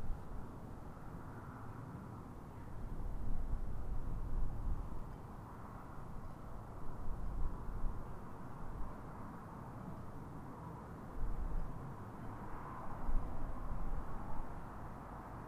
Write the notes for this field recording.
so hot. chinqi listens from yukikos roof. some workers return to homebase and JUST as the FIRE MONKEY hour draws to a close and the FIRE BIRD hour begins eka emerges from the office and we are done here!